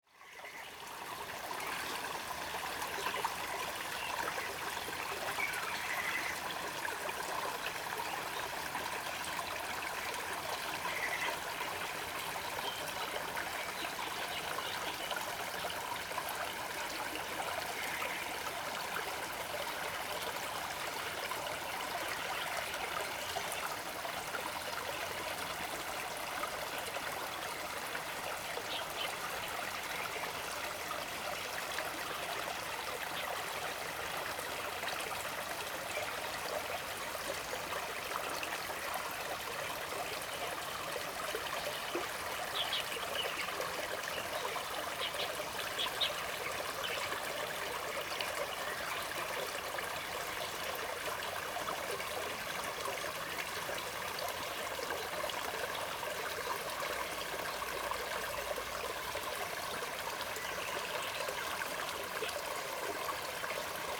中路坑溪, 埔里鎮桃米里, Taiwan - Bird and Stream
Bird sounds, small Stream
Zoom H2n MS+XY